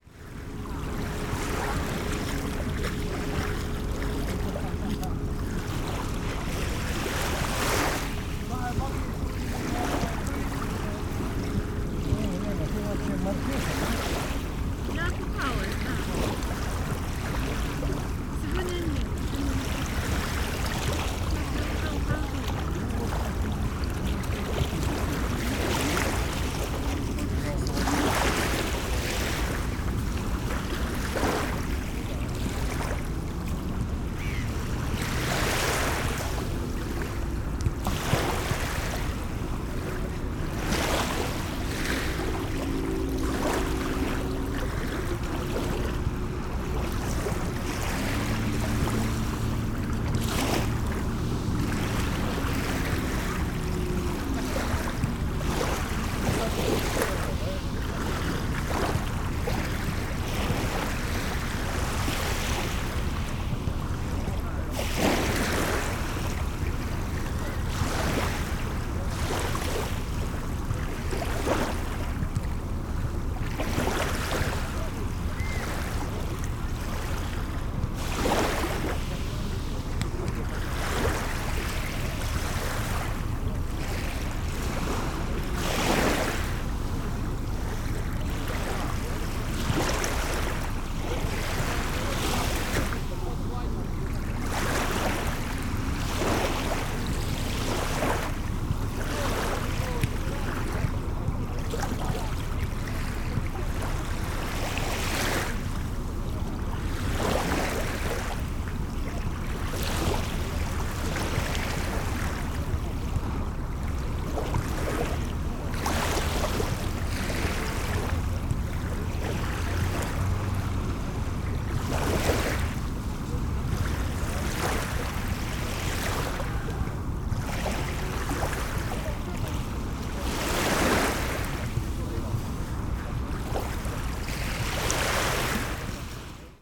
Pirita Beach Tallinn, waterfront
recording from the Sonic Surveys of Tallinn workshop, May 2010